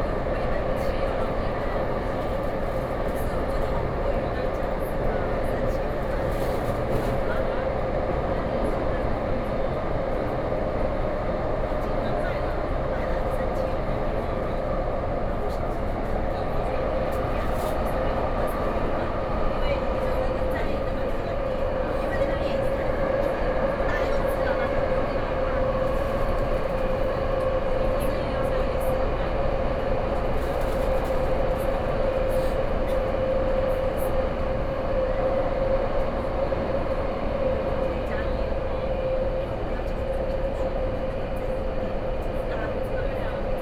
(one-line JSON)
{"title": "Taipei, Taiwan - Take the MRT", "date": "2012-12-07 17:00:00", "latitude": "25.02", "longitude": "121.52", "altitude": "14", "timezone": "Asia/Taipei"}